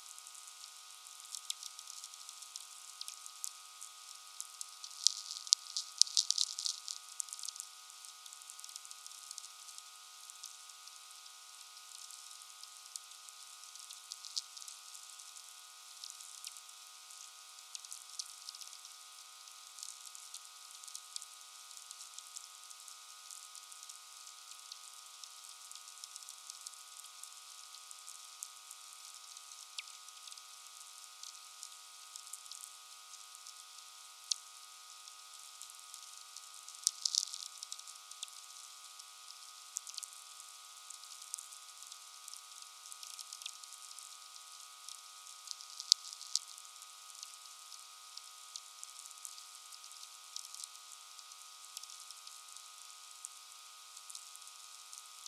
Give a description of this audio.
Just after the sunset. The sky is still red, the winter is here or there, I stand with VLF receiver and listen to sferics, tweeks...